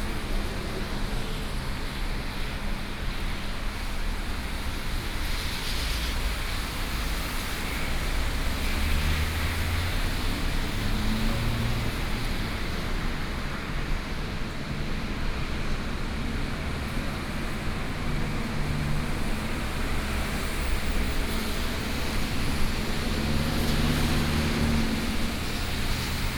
Taoyuan International Airport - in the Airport
in the Airport